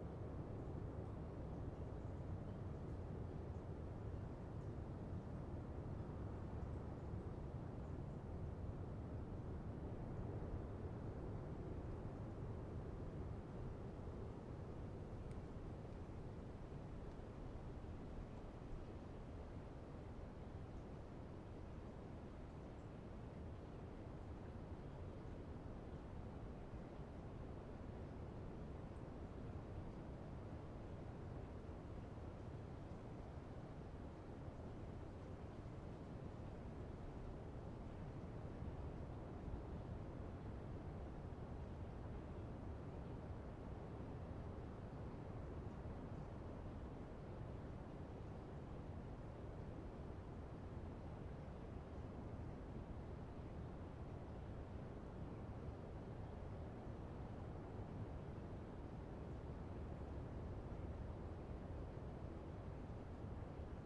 Valdivia, Chili - LCQA AMB PUNTA CURIÑANCO FOREST QUIET SOME BIRDS MS MKH MATRICED
This is a recording of a forest in the Área costera protegida Punta Curiñanco. I used Sennheiser MS microphones (MKH8050 MKH30) and a Sound Devices 633.
Provincia de Valdivia, Región de Los Ríos, Chile, 24 August 2022